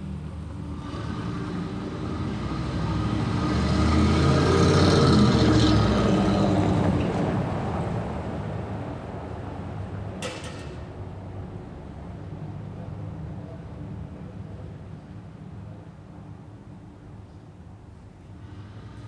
Bissingen an der Teck, Deutschland - Bissingen an der Teck - Street setting, church bell
Bissingen an der Teck - Street setting, church bell.
Bissingen was visited by R. M. Schafer and his team in 1975, in the course of 'Five Village Soundscapes', a research tour through Europe. So I was very curious to find out what it sounds like, now.
[Hi-MD-recorder Sony MZ-NH900, Beyerdynamic MCE 82]